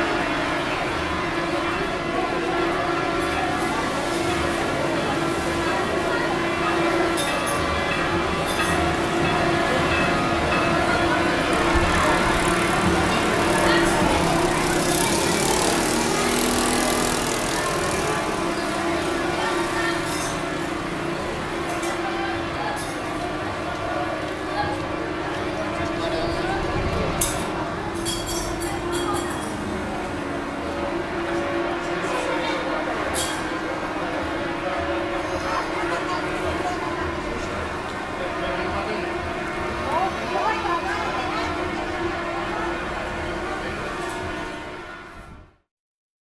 Ναυάρχου Παναιτίου, Τήνος, Ελλάδα - Soundscape consinisting of church bells and horns at the end of the Holy Procession of St. Pelagia.
Anniversary of the Vision of Saint Pelagia.
At the end of the Holy Procession boats and buses using their horns at the same time with the church bells to participate in the celebration. Also, you can listen some children playing near the recording, a game with lids of refreshments
This is a Recording made by the soundscape team of EKPA university for KINONO Tinos Art Gathering.
Recording Equipment:Zoom Q2HD
Tinos, Greece, 23 July 2018